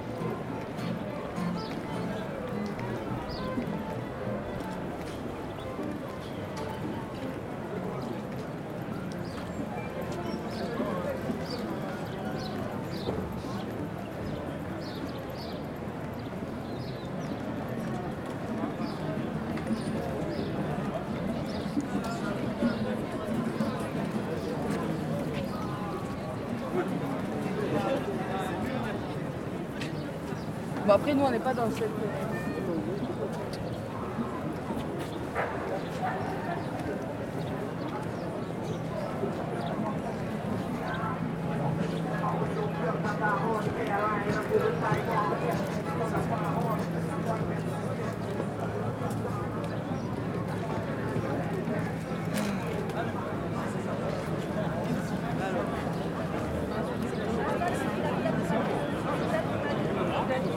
Saint - Aubin - Dupuy, Toulouse, France - Outdoor maket of Saint Aubin
Outdoor maket of Saint Aubin
Dan Rob captation : 18 04 2021
18 April, 10:00